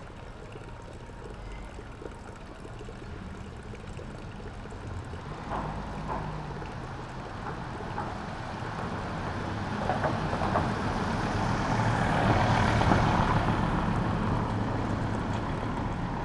taormina, piazza duomo - fountain, morning
in the morning
Taormina ME, Italy